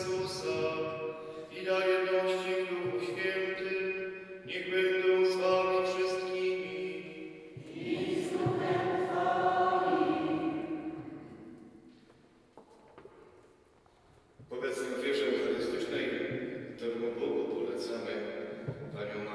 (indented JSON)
{
  "title": "Parkowa, Sokołowsko, Poland - Nedělní mše v Kościółe pw. Matki Bożej Królowej Świata",
  "date": "2019-08-18 10:01:00",
  "description": "Recorded Sunday morning (on ZOOM H2N), during the art festival Sanatorium of Sound in Sokolowsko.",
  "latitude": "50.69",
  "longitude": "16.24",
  "timezone": "GMT+1"
}